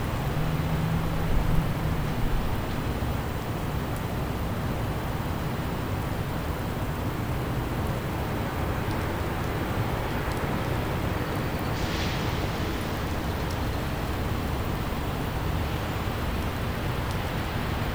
Shove Memorial Chapel, Colorado College, N Nevada Ave, Colorado Springs, CO, USA - Shove Chapel on a rainy afternoon
Recorded in front of Shove Memorial Chapel's main (Western) entrance, facing West, using a Zoom H2 recorder.
Rain, cars, emergency vehicle sirens, and bell chiming are all part of the soundscape.